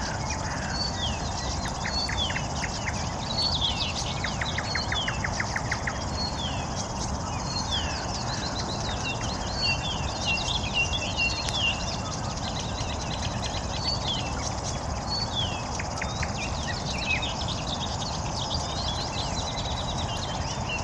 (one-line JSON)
{"title": "Moscow, Shipilovskiy pr - Morning Campfire, Birds etc.", "date": "2010-05-24 06:10:00", "description": "Morning, Birds, Campfire, Street Traffic", "latitude": "55.60", "longitude": "37.70", "altitude": "148", "timezone": "Europe/Moscow"}